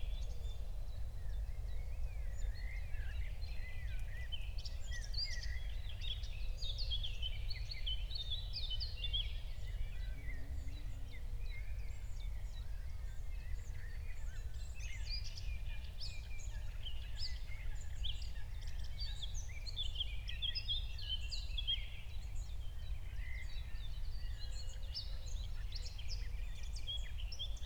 Berlin, Buch, Mittelbruch / Torfstich - wetland, nature reserve
05:00 Berlin, Buch, Mittelbruch / Torfstich 1